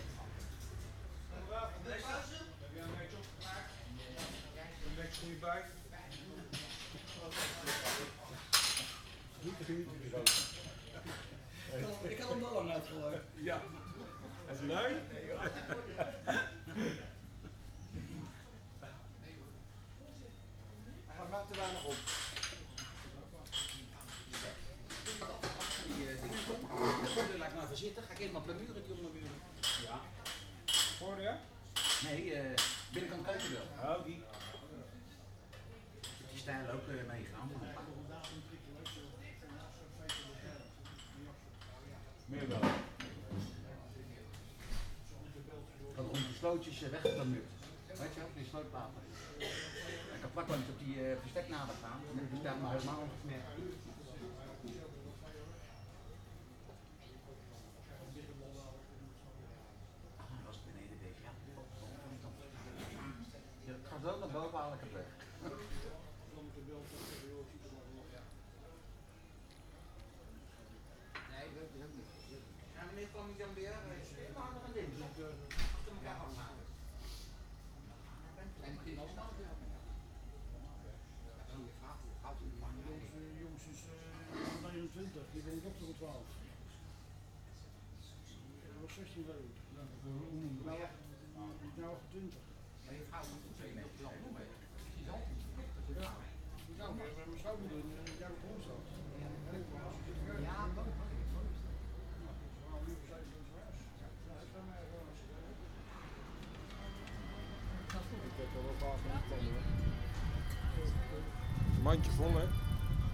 Hagenezen (inhabitants of The Hague) discussing stuff in at ice-cream parlor Florencia.
Recorded as part of The Hague Sound City for State-X/Newforms 2010.